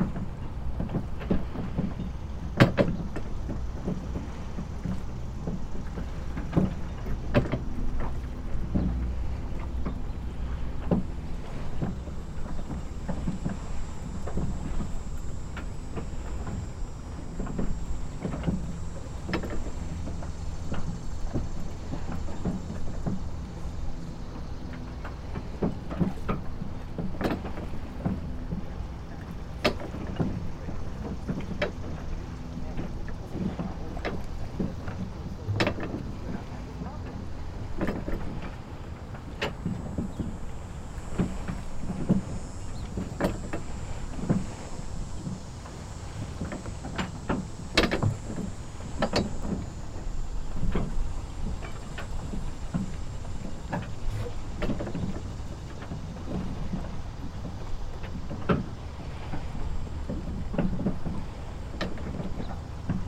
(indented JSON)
{
  "title": "Washington St, Newport, RI, USA - Maritime Sounds, Newport",
  "date": "2019-08-31 09:30:00",
  "description": "Recorded on the boardwalk over the water.\nZoom H6",
  "latitude": "41.49",
  "longitude": "-71.32",
  "altitude": "1",
  "timezone": "America/New_York"
}